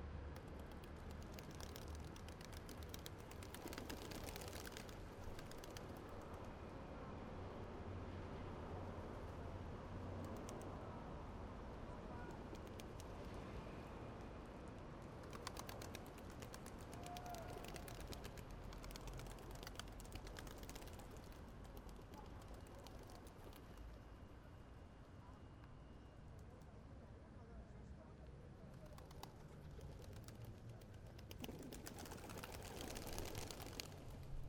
Ivan pl.Zajc, Rijeka, Pigeons Invasion